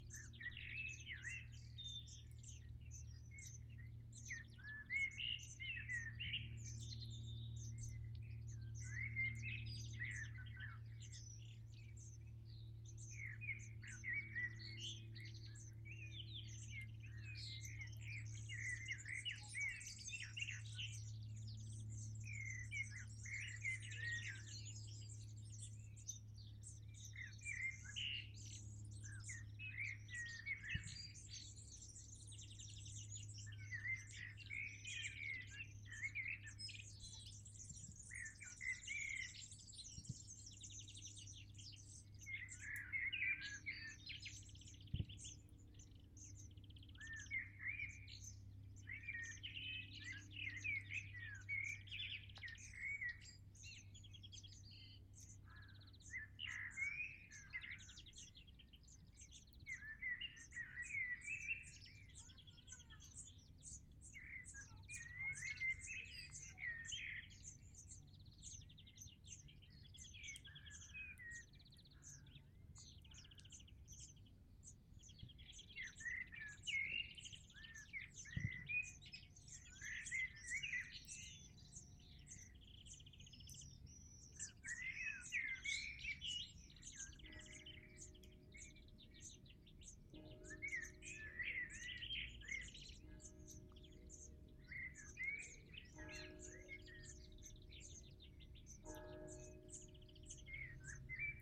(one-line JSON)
{"title": "Dessau-Roßlau, Deutschland - Schrebergartenanlage | allotments", "date": "2013-06-14 20:03:00", "description": "Schrebergarten - Piepsen aus einen Nistkasten, Vogelgesang, Kirchenglocken, Motarradknattern vom Weitem | Allotment - peeps out a nest box, bird singing, ringing church bells, far away rattle of a motorcycle", "latitude": "51.85", "longitude": "12.25", "altitude": "59", "timezone": "Europe/Berlin"}